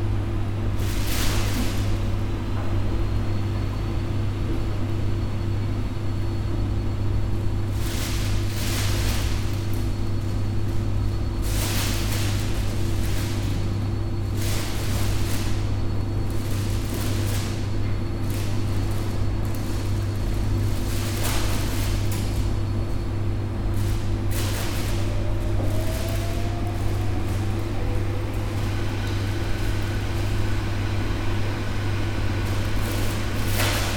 A coin-operated laundry, with a lot of washing machines operating. Soporific sound !